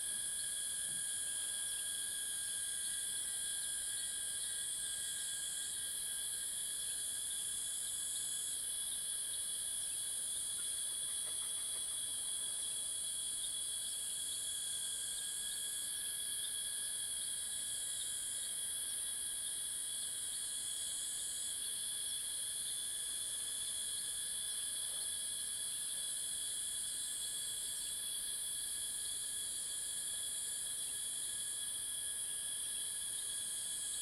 油茶園, 五城村 Yuchih Township - early morning
Cicada sounds, Birds called, early morning
Zoom H2n MS+XY +Spatial audio
Nantou County, Yuchi Township, 華龍巷43號